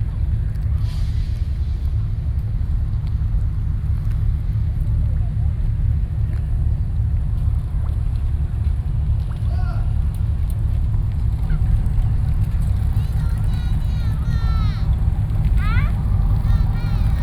Bisha Fishing Harbor, Keelung - Pier
Fishing boats, Traveling through, Sony PCM D50 + Soundman OKM II
基隆市 (Keelung City), 中華民國, 24 June 2012